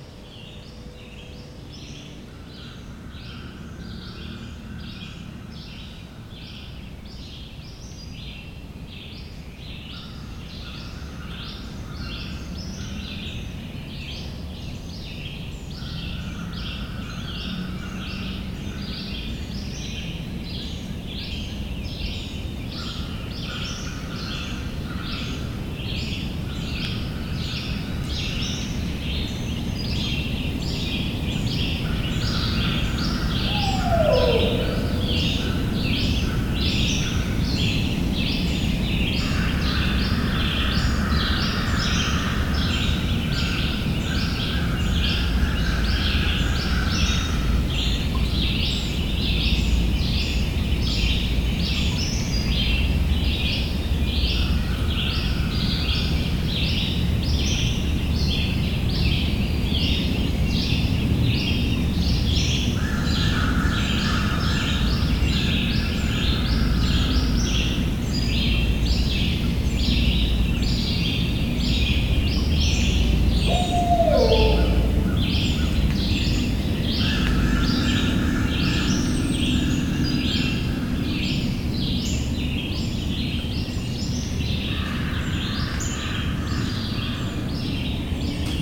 E Cherokee Dr Youngstown, Ohio - Summer Thunder
I'm visiting my parents during the Covid-19 pandemic, sleeping in my childhood bedroom which has a porch off the back that faces Mill Creek Park. I loved listening to thunderstorms as a kid. So when one started, I set up my shotgun Mic and hit record. I believe I also caught the call of a Great Horned Owl and a bird I don't know.
Ohio, United States of America, 11 July 2020